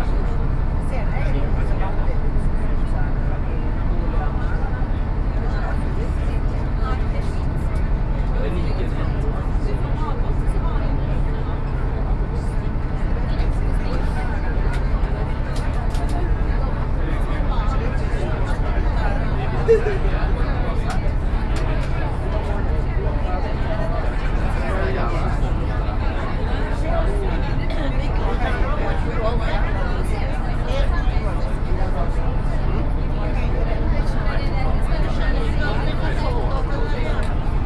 Oslo, Boat 91, Aker brygge to Dronningen
Norway, Oslo, boat, sea, binaural